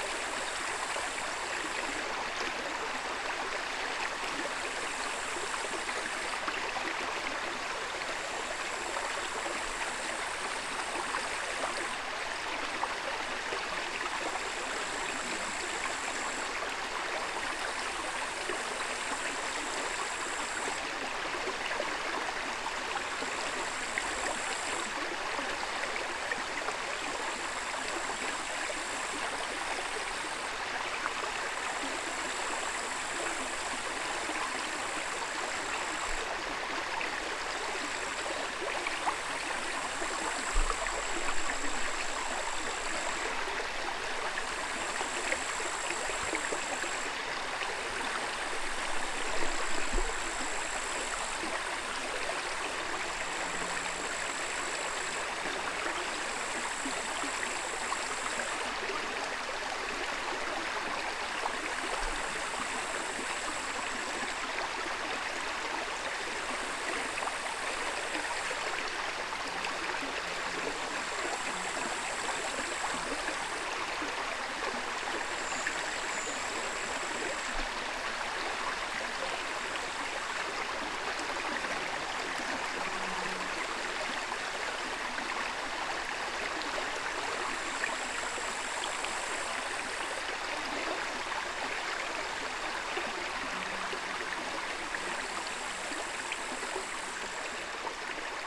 Inkūnai, Lithuania, river in the wood
Small, undisturbed, river in the wood
Utenos apskritis, Lietuva, 11 August 2022